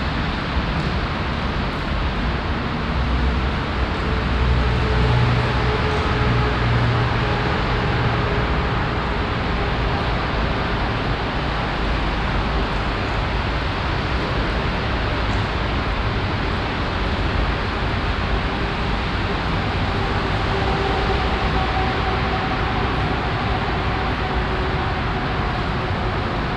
Oberkassel, Düsseldorf, Deutschland - Düsseldorf, gutted former church
Inside a former church, that has been gutted and opened on the wallside for a complete reconstruction. The sound of traffic from the nearby highway and rain dripping on and in the building.
This recording is part of the exhibition project - sonic states
soundmap nrw - sonic states, social ambiences, art places and topographic field recordings